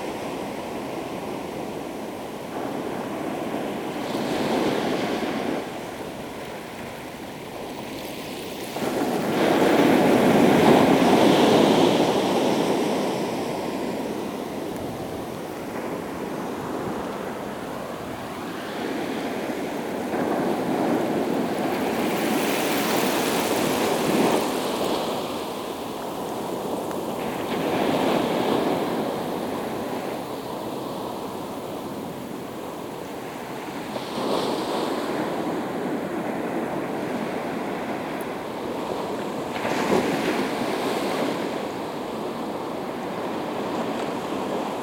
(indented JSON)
{
  "title": "Kijkduin, Nederlands - The sea",
  "date": "2019-03-30 10:10:00",
  "description": "Kijkduin, the sea at Zuiderstrand",
  "latitude": "52.07",
  "longitude": "4.22",
  "timezone": "Europe/Amsterdam"
}